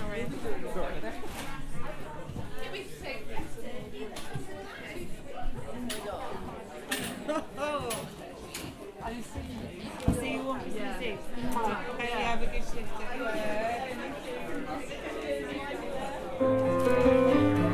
The Terrace, Lydham, Bishops Castle, UK - Friday market
Friday town market just before Christmas, 2019. Carolers in the corner, and general hub-bub of people buying produce, etc.
England, United Kingdom, 20 December 2019